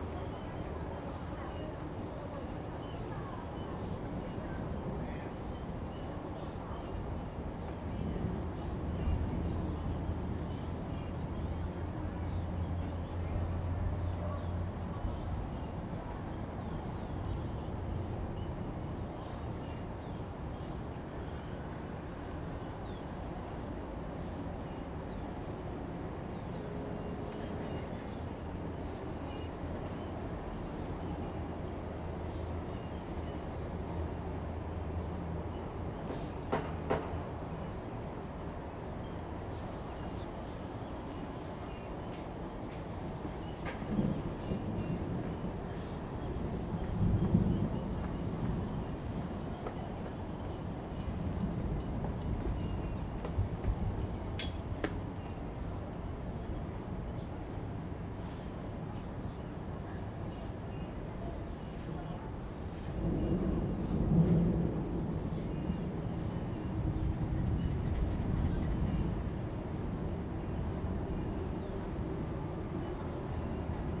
Bitan Rd., Xindian Dist., New Taipei City - Thunder sound
The other side came the sound of construction, Thunder sound, birds
Zoom H2n MS+ XY
New Taipei City, Taiwan, 28 July 2015, ~15:00